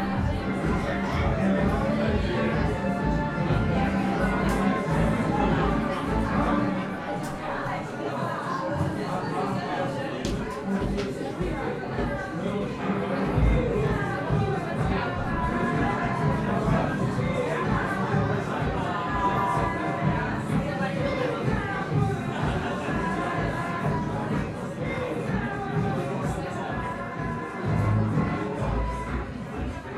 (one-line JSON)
{"title": "berlin, weichselstraße: bar - the city, the country & me: bar", "date": "2011-04-17 00:19:00", "description": "at kachellounge (= tile lounge) bar, on the wooden stairs leading to the basement of the bar, partying guests\nthe city, the country & me: april 17, 2011", "latitude": "52.49", "longitude": "13.43", "altitude": "45", "timezone": "Europe/Berlin"}